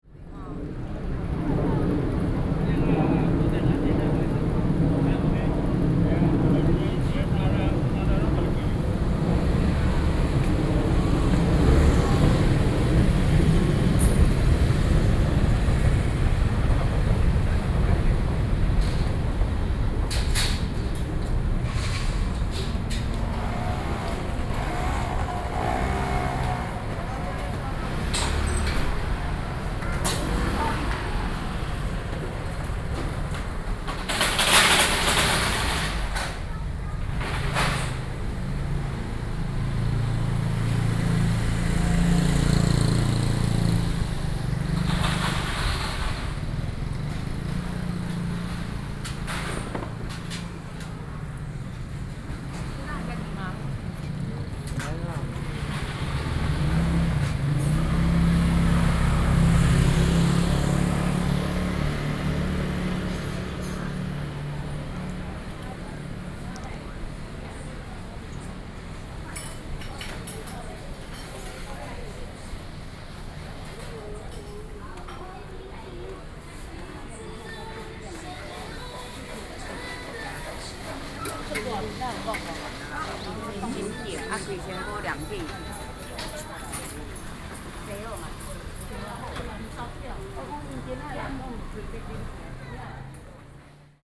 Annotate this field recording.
Street after work, Sony PCM D50 + Soundman OKM II